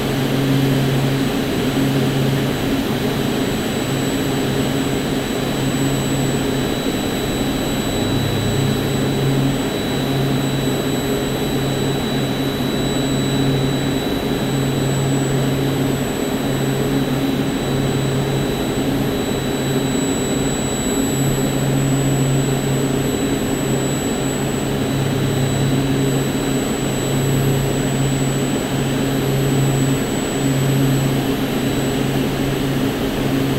La Bruyère, Belgium - Wind turbine
While walking, I made a stop near a wind turbine. This recording is the strong and unpleasant noise inside the wind turbine column.
19 November 2017